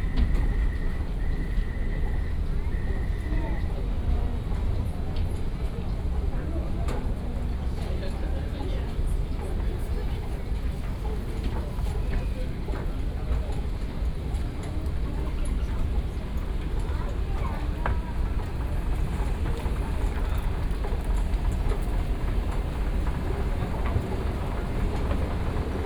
Sec., Xinyi Rd., Da'an Dist. - walk into the MRT station
Away from the main road into the MRT station